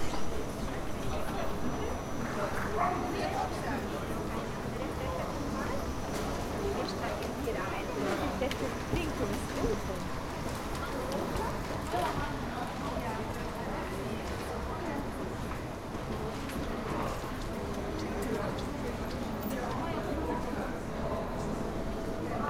Main Station, Aarau, Schweiz - Sounds of Tickets
Commuters check their tickets while hurrying to the local trains
3 February 2016, 17:33